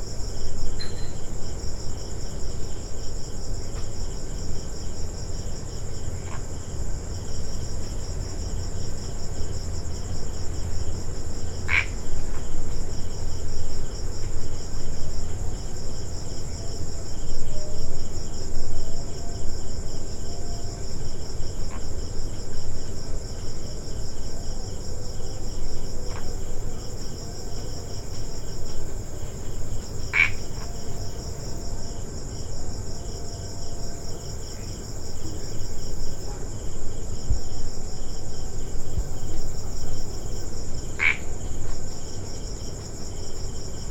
Cox's Bazar, Bangladesh - A house courtyard in the evening near sea beach
This recording was made at the courtyard of a friend's house in Cox's bazar. The house is located quite close to the sea. You hear it's constant roar at the background all the time. There was almost no wind in that evening. You hear the crickets and just one frog making calls.
কক্সবাজার জেলা, চট্টগ্রাম বিভাগ, বাংলাদেশ